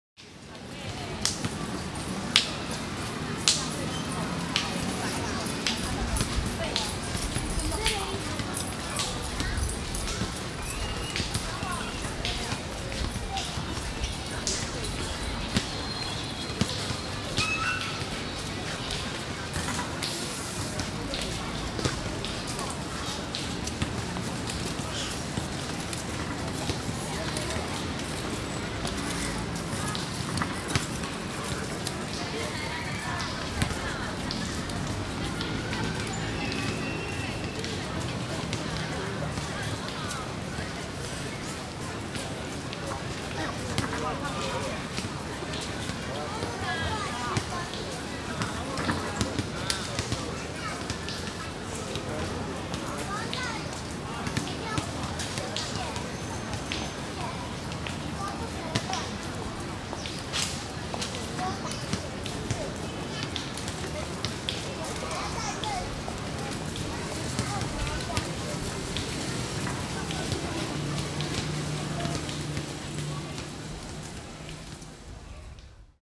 四維公園, Banqiao Dist., New Taipei City - Playground
Many people around the playground
Sony Hi-MD MZ-RH1 +Sony ECM-MS907